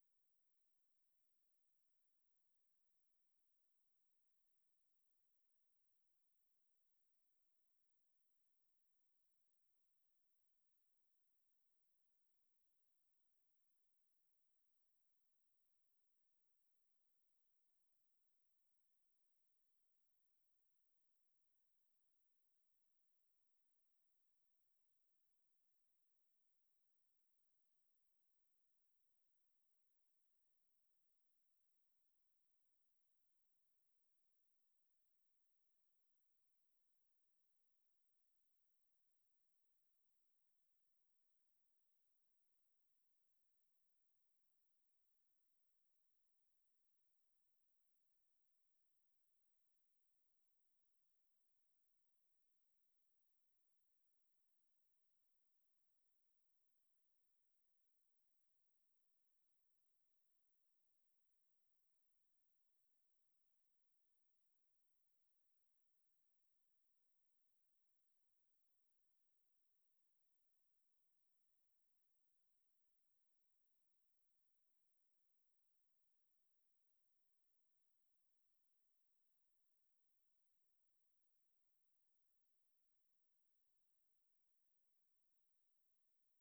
At a track at bremen main station. The sound of different trains passing by or entering the station, rolling suitcases passing a metal surface, a queeking elevator door and an announcement.
soundmap d - social ambiences and topographic field recordings
Bremen, Germany